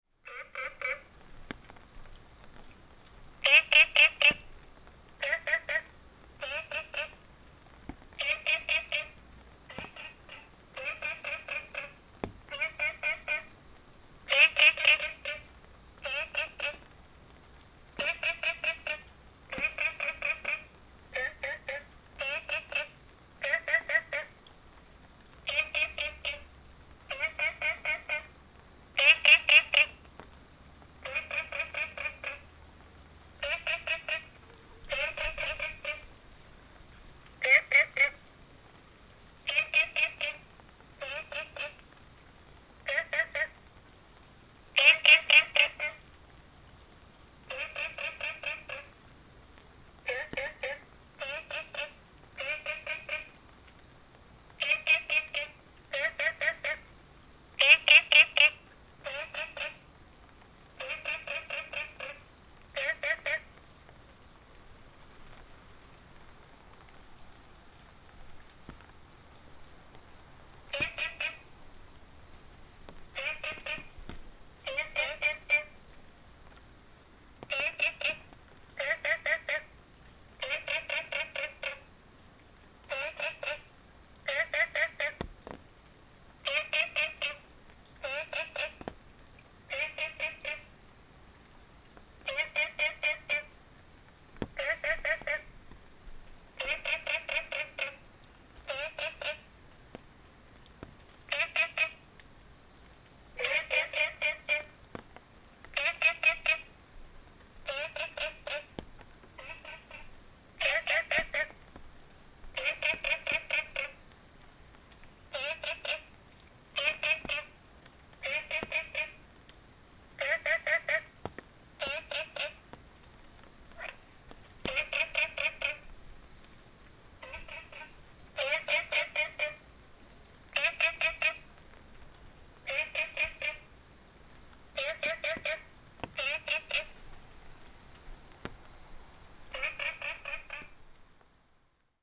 綠屋民宿, 桃米里 Taiwan - Frogs sound
Frogs sound, Small ecological pool, Rainy Day
Puli Township, Nantou County, Taiwan, 27 August, 06:39